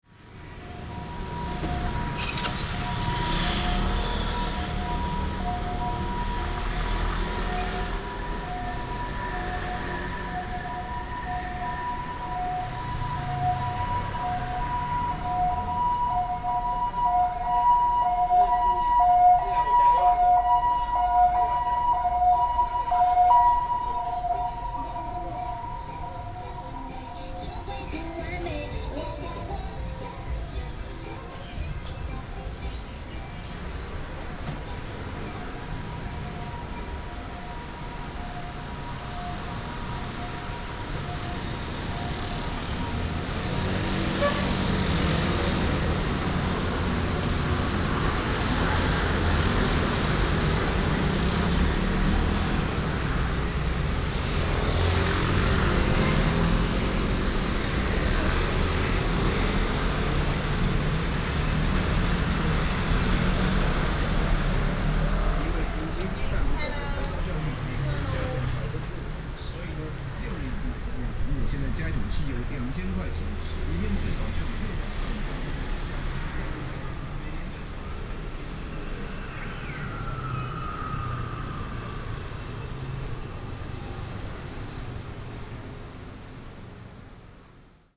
walking in the Street, Traffic sound
Hsinchu City, Taiwan, 6 April 2017